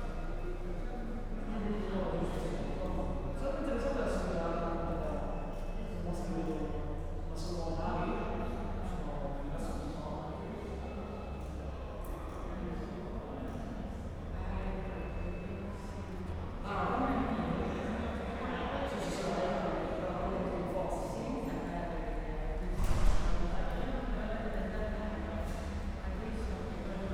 Muggia, bus station, waiting for a lift to Lazaretto. station hall ambience, people talking, cafe is closed.
(SD702, DPA4060)